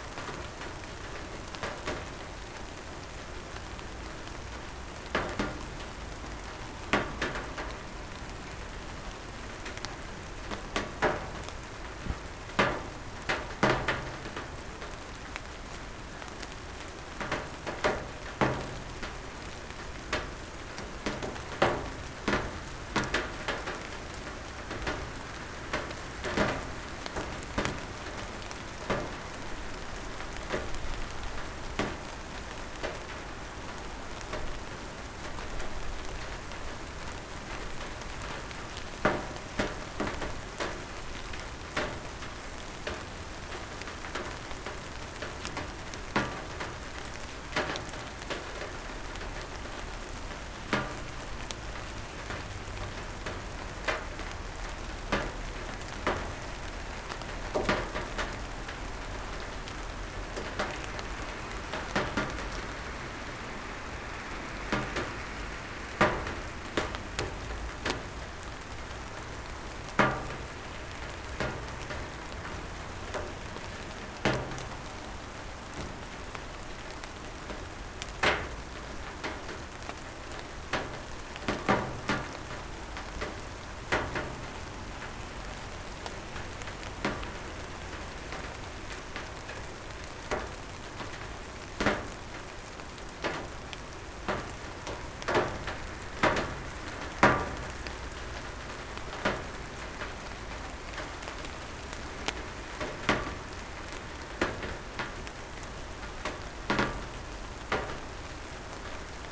Mesaanitie, Oulu, Finland - Summer rain
Calm summer rain recorded from my open window. Cars driving by. Zoom H5, default X/Y module
30 June, 22:06